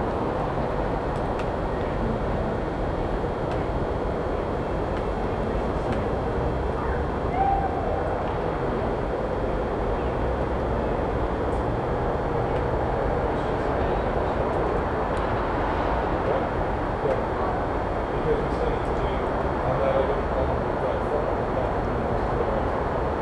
Vancouver, BC, Canada - Strathcona Pedestrian Bridge
Recorded above the train tracks on the pedestrian bridge in Strathcona.
18 March 2012, 23:00